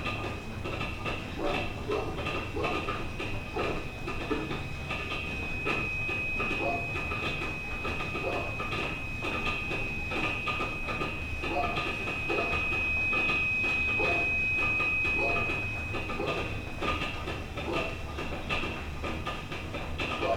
3136 Rosa Parks
This recording was done on December 31st 2009 with a stereo pair of condenser microphones, a contact mic, and a bullhorn. The house was abandoned and boarded up after a fire.